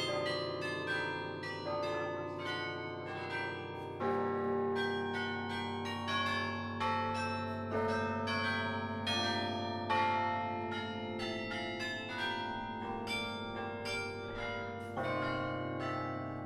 The bells are rigning every 14min with different sounds.
Brugge, Belgium, 8 October 2016